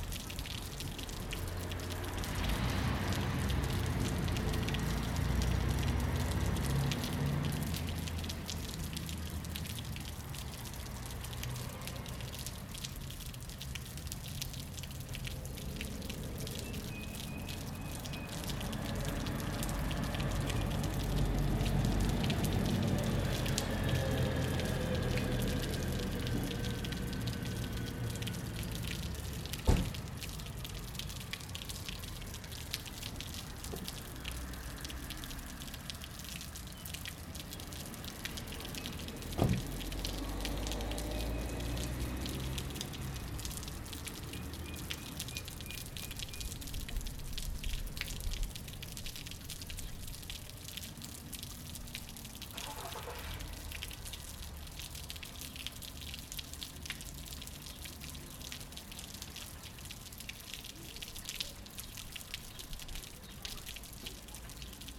Er besteht aus zwei Hälften eines Findlings. Aus der oberen Hälfte tropft Wasser auf die untere. Dies soll an den mittelalterlichen Bergbau in der Region erinnern. Zusätzlich ein paar Busse, die am Platz vorbeifahren, eine Autotür, Vögel./
It consists of two halves of a boulder. Water dripping from the upper half to the lower. This is reminiscent of the medieval mining in the region. In addition, a few buses that past the square, a car door, birds.